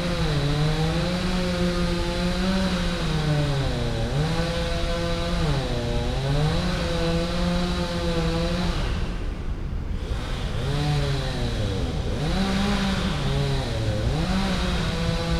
Poznan, Park Solacki - wood works
man working a power saw, cutting a fallen tree into logs. (roland r-07)
wielkopolskie, Polska